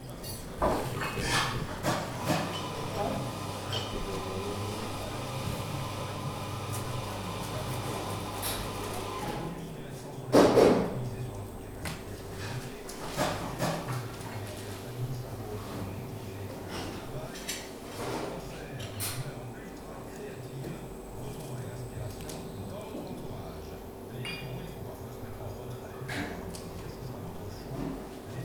Route de Saint-Donat, Lans-en-Vercors, Frankrijk - Love you very much
Morning breakfast, songs on the radio, hotel sounds, bon appétit. (Recorded with ZOOM 4HN)
Lans-en-Vercors, France